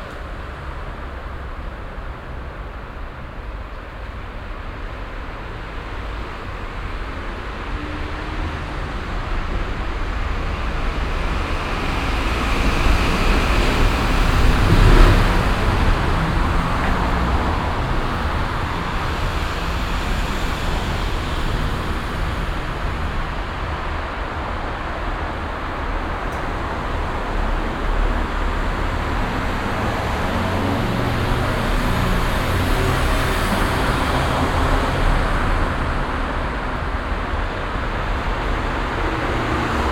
cologne, am weltstadthaus, nord süd fahrt einfahrt
einfahrt zur nord - süd fahrt, nachmittags
verkehrsintervall das resonieren der tunnelstrecke
soundmap nrw: social ambiences - topograühic field recordings